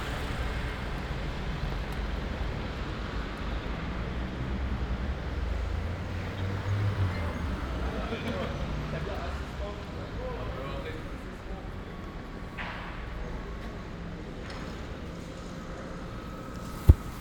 "Saturday night walk in Paris, before curfew, in the time of COVID19": Soundwalk
Saturday, October 17th 2020: Paris is scarlett zone for COVID-19 pandemic.
One way trip walking from from Boulevard Poissonnière to airbnb flat. This evening will start COVID-19 curfew from 9 p.m.
Start at 8:43 p.m. end at 9:16 p.m. duration 33’05”
As binaural recording is suggested headphones listening.
Path is associated with synchronized GPS track recorded in the (kmz, kml, gpx) files downloadable here:
For same set of recordings go to:
France métropolitaine, France, 17 October 2020